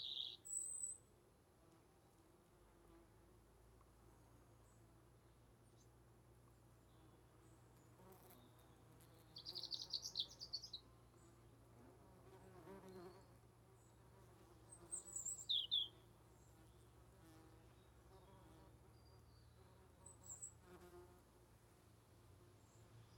Gresham House, Calstock Rd, Gunnislake, UK - Wasps and Birds in an Apple Tree
In the garden of a 19th century mine captain's home in the Tamar Valley, there is an apple tree. I placed a ZOOM Q2HD microphone face-up underneath the tree.
24 September 2018